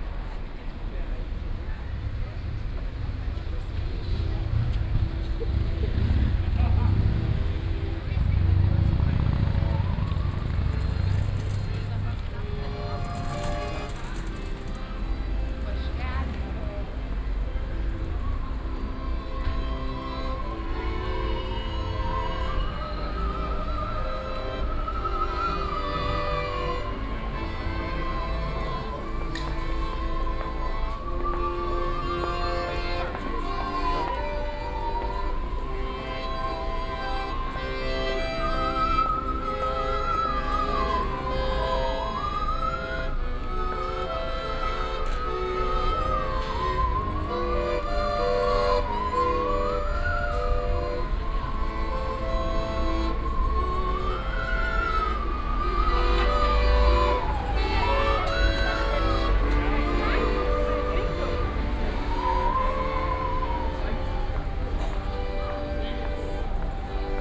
a bit of a weird version of the song, interpreted by two russian musicians, who were obviously in a good mood.
(PCM D50, OKM2)